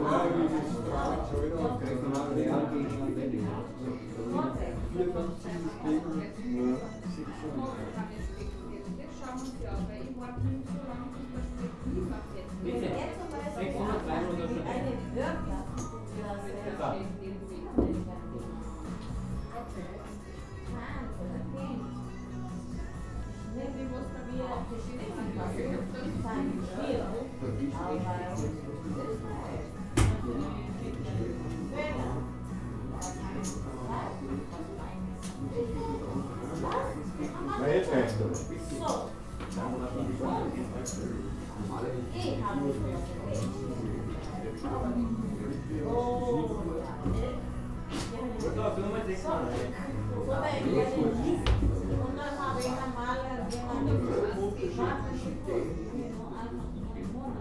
café silvia, linz-kleinmünchen